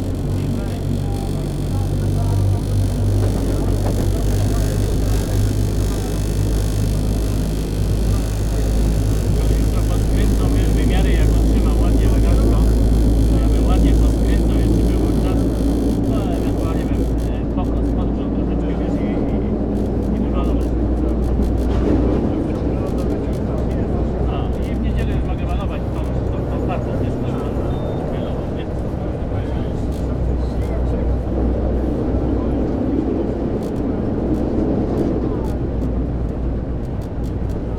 Poznan, Winogrady district, PST route - tram line 16
traveling on the fast tram route towards Piatkowo district (big residential district in north of Poznan). the tram car is an old model from the 80s. most of its parts rattle, vibrate, grind and whine during the ride. tram is full of passengers. conversations, phone calls, sighs due to crowd.
Poznan, Poland